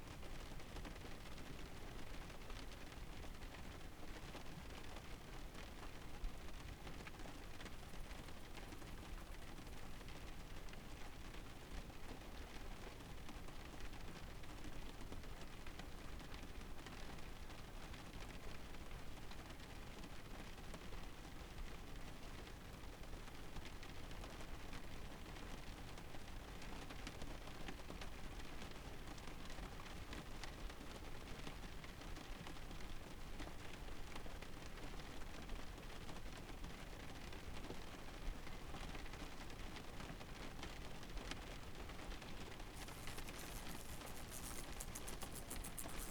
{
  "title": "Kazitiškis, Lithuania, in the car in the rain",
  "date": "2013-07-31 12:50:00",
  "description": "trapped in the car with lonely fly..rain and forest outside",
  "latitude": "55.52",
  "longitude": "26.01",
  "altitude": "174",
  "timezone": "Europe/Vilnius"
}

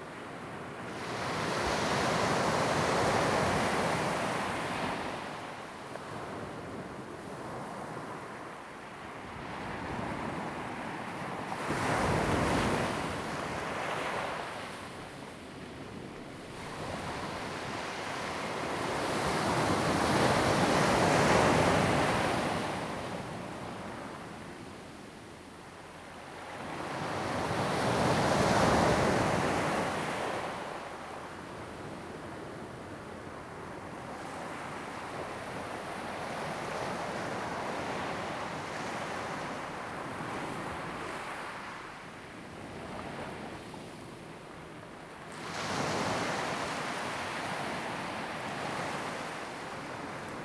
{
  "title": "Ponso no Tao, Taiwan - Sound of the waves",
  "date": "2014-10-28 16:09:00",
  "description": "In the beach, Sound of the waves\nZoom H2n MS +XY",
  "latitude": "22.05",
  "longitude": "121.52",
  "altitude": "9",
  "timezone": "Asia/Taipei"
}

